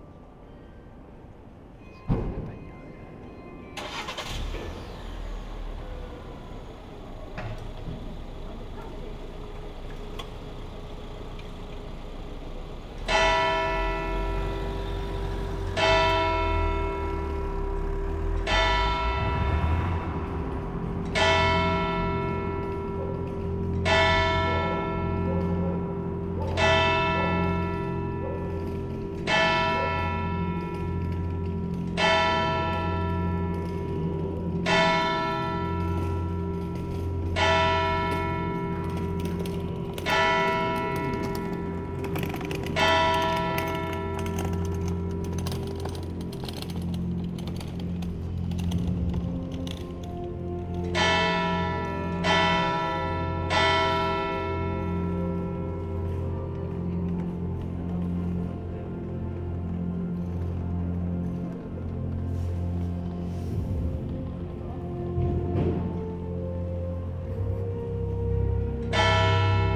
Aalst, België - Aalst bells
The Aalst church bells and terrible distant sound of the local market (but all the city is like that).